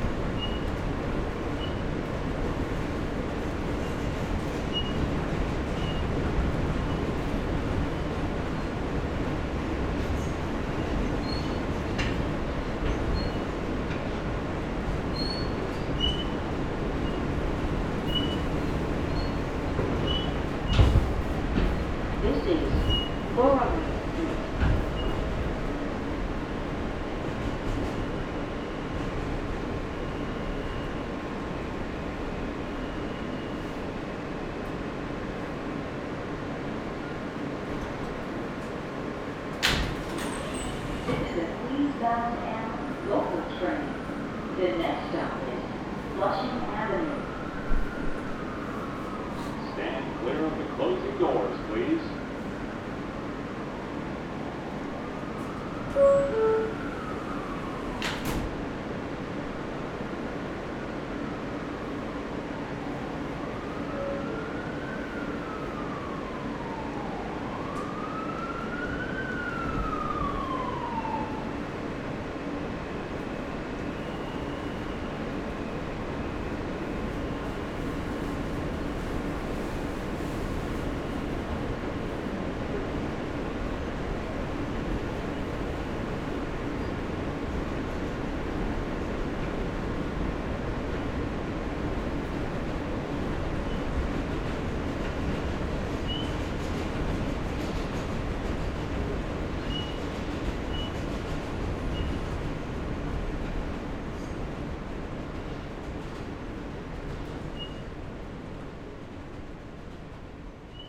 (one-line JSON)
{"title": "Wythe Av/S 5 St, Brooklyn, NY, USA - Returning Home from Work during Covid-19", "date": "2020-03-26 14:15:00", "description": "Returning home from work during Covid-19.\nSounds of the M train, mostly empty.\nZoom h6", "latitude": "40.71", "longitude": "-73.97", "altitude": "12", "timezone": "America/New_York"}